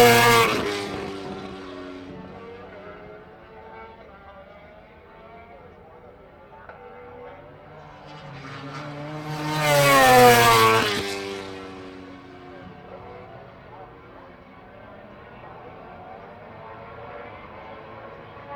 Derby, UK - british motorcycle grand prix 2006 ... motogp free practice 2 ...
british motorcycle grand prix 2006 ... motogp free practice 2 ... one point stereo to minidisk ... commentary ...
England, United Kingdom